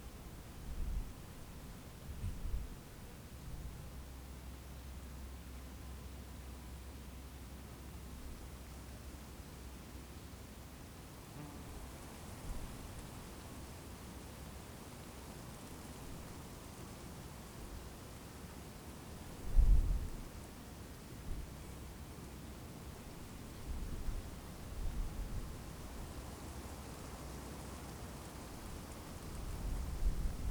Terschelling, Oosterend - Terschelling, Oosterend opname 2
Its very quiet!